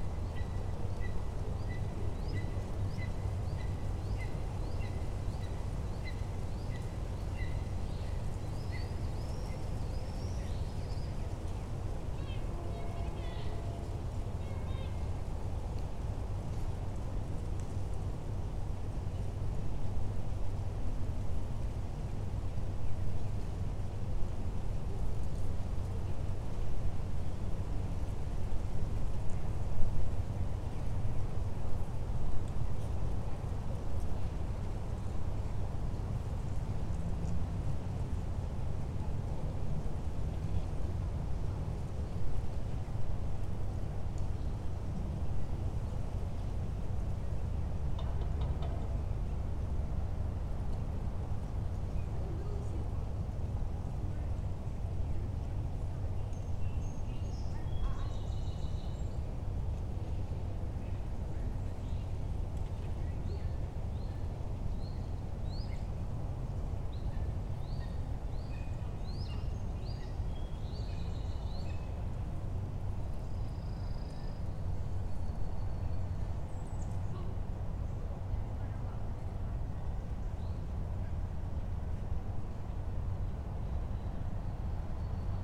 Manning Rd SW, Marietta, GA, USA - Laurel Park - Pond
One of the ponds at Laurel Park. The recorder was placed on a picnic table to capture the soundscape of the surrounding area. Birds, park visitors, children playing, traffic, people walking around the pond, and noises from the nearby houses can all be heard. The water in the pond is still and produces no sound of its own.
[Tascam Dr-100mkiii & Primo EM272 omni mics]